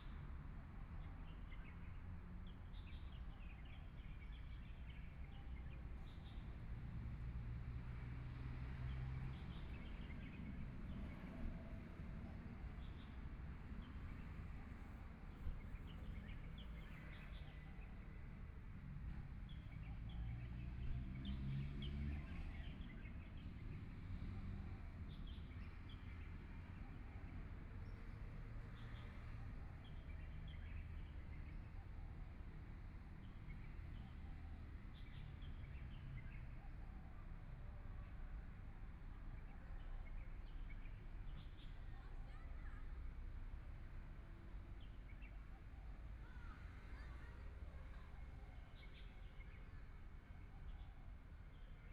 中琉紀念公園, Hualien City - in the Park
Traffic Sound, Birdsong, Aircraft flying through
Please turn up the volume
Binaural recordings, Zoom H4n+ Soundman OKM II